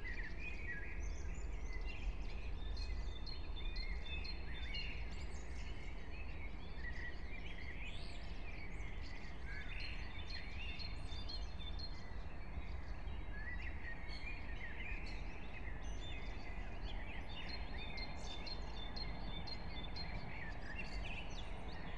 {"title": "Brno, Lužánky - park ambience", "date": "2021-04-17 04:30:00", "description": "04:30 Brno, Lužánky\n(remote microphone: AOM5024/ IQAudio/ RasPi2)", "latitude": "49.20", "longitude": "16.61", "altitude": "213", "timezone": "Europe/Prague"}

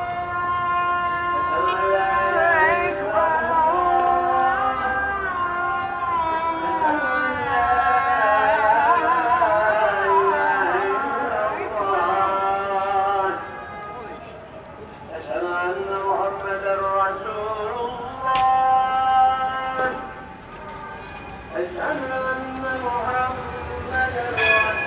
{"title": "Multiple Adhan calls in the golden horn", "date": "2010-02-10 15:12:00", "description": "I stopped to record a noisy flute sound when suddenly many Adhan calls broke out", "latitude": "41.01", "longitude": "28.97", "altitude": "60", "timezone": "Europe/Tallinn"}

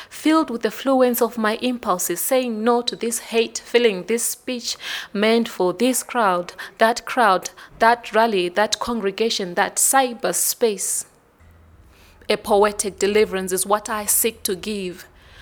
{"title": "Office of the Book Cafe, Harare, Zimbabwe - Zaza Muchemwa, “Delivery…”", "date": "2012-10-13 17:55:00", "description": "Zaza Muchemwa, “Delivery…”", "latitude": "-17.83", "longitude": "31.06", "altitude": "1489", "timezone": "Africa/Harare"}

